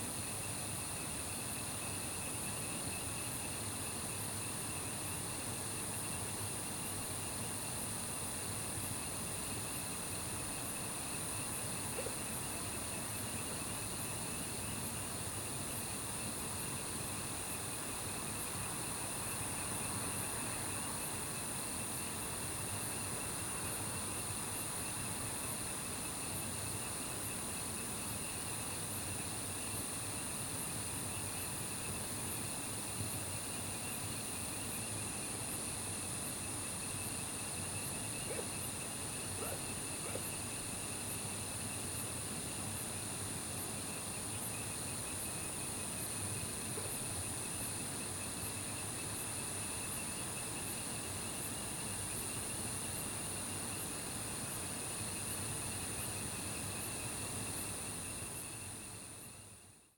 桃米里, 埔里鎮 Puli Township - Night hamlet
Night hamlet, Insects sounds, Dogs barking, Frogs chirping, The sound of water streams
Zoom H2n MS+XY